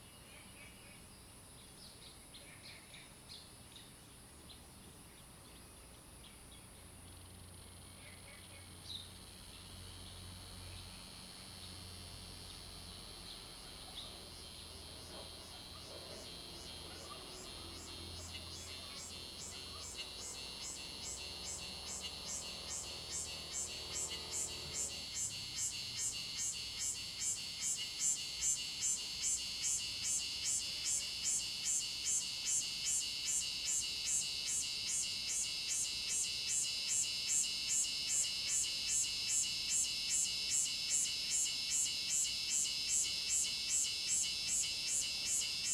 Hot weather, Cicadas sound, Bird calls, Dogs barking
Zoom H2n MS+XY
Puli Township, Nantou County, Taiwan